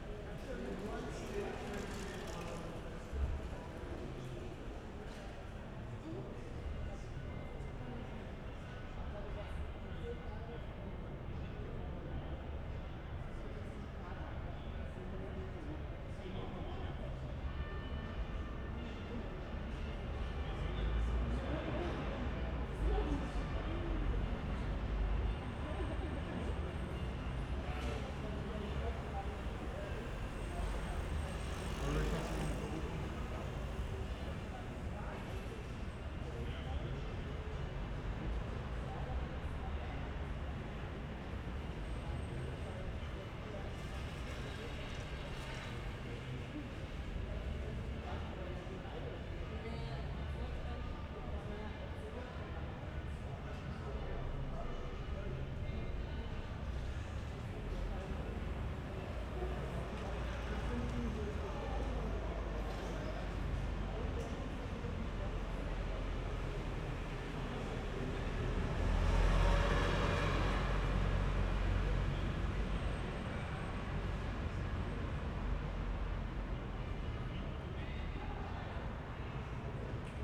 berlin, bürknerstraße: in front of radio aporee - saturday night steet sounds
sitting in front of my door, on the sidewalk, listening to the saturay night sounds of the street, a warm spring night.
(tech: SD702 Audio Technica BP4025)